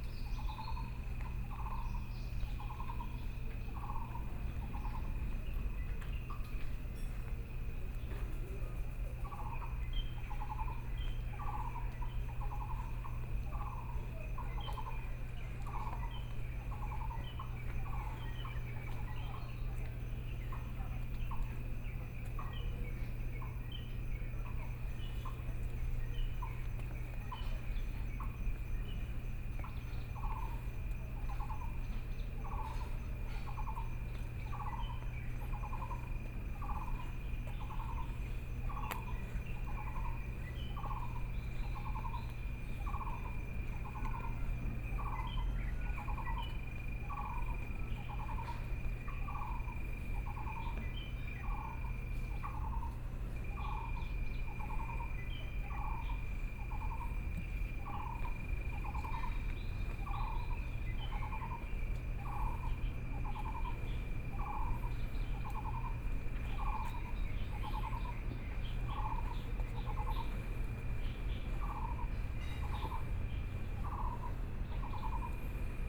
碧湖公園, Taipei City - in the Park
Frogs sound, Insects sound, Birdsong, Dogs barking, Traffic Sound